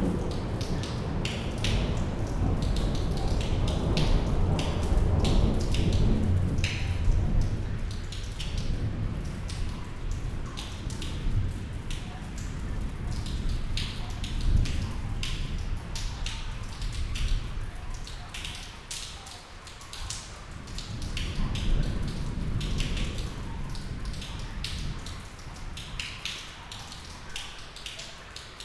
inner yard window, Piazza Cornelia Romana, Trieste, Italy - rain, drops, thunder